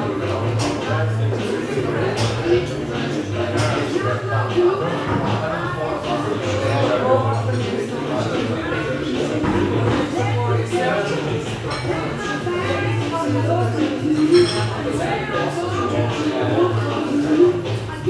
outside light rain, almost dark already, cups, people keep saying 'adíjo' ...
Ljubljana, Slovenia, 4 March 2015, 17:45